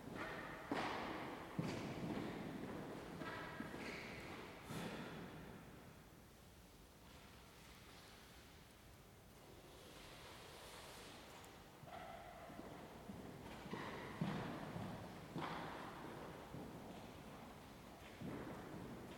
Via Wolkenstein, Bolzano BZ, Italia - 26.10.19 - Chiesa dei Cappuccini, interno
Interno della Chiesa dei Cappuccini. Il sacerdote spegne le candele e prepara la chiusura della Chiesa.
Registrato da Luisa Pisetta
26 October, 11:13